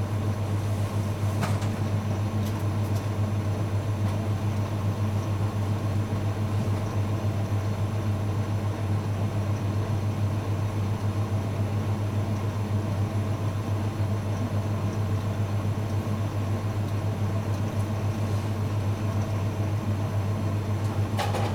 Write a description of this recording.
the drone of the cooling units at the Kosmos club. the owner bustling around, tiding up before closing.